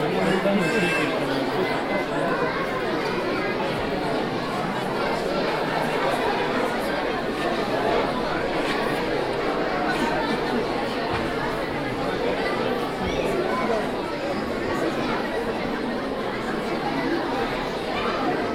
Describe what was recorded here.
France, Couternon, Municipal hall, Waiting, kindergarten show, children, crowd, Binaural, Fostex FR-2LE, MS-TFB-2 microphones